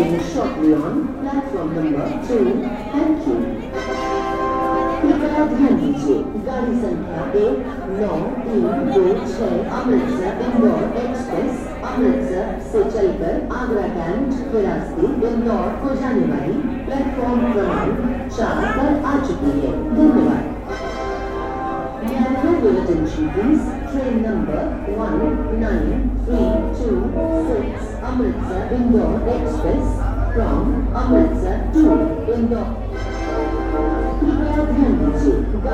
{"title": "LNUPE Campus, Thatipur, Gwalior, Madhya Pradesh, Inde - Gwalior train station", "date": "2015-10-25 15:55:00", "latitude": "26.22", "longitude": "78.18", "altitude": "212", "timezone": "Asia/Kolkata"}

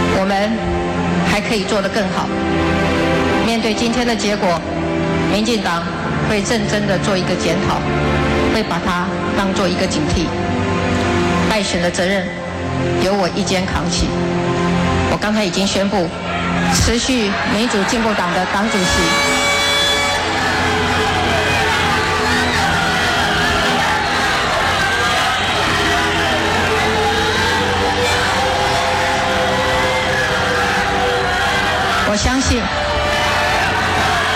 {"title": "Banqiao, Taiwan - Concession speech", "date": "2012-01-14 21:06:00", "description": "Taiwan's presidential election, Concession speech, Sony ECM-MS907, Sony Hi-MD MZ-RH1", "latitude": "25.01", "longitude": "121.47", "altitude": "10", "timezone": "Asia/Taipei"}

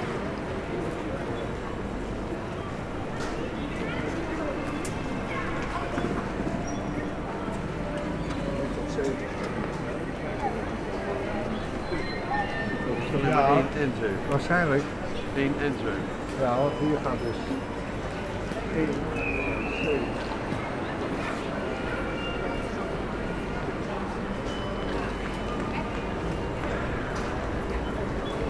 {"title": "Den Haag, Nederlands - Den Haag station", "date": "2019-03-30 14:50:00", "description": "Den Haag station. One person playing the station piano.", "latitude": "52.08", "longitude": "4.32", "altitude": "1", "timezone": "Europe/Amsterdam"}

